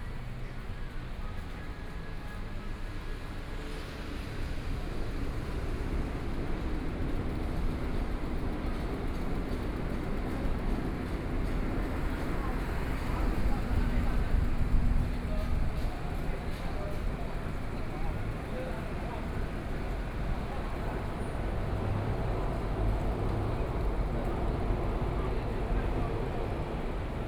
Zhongzheng Rd., Shilin - Walking in the street
Walking in the street, Direction to the MRT station, Binaural recordings, Zoom H6+ Soundman OKM II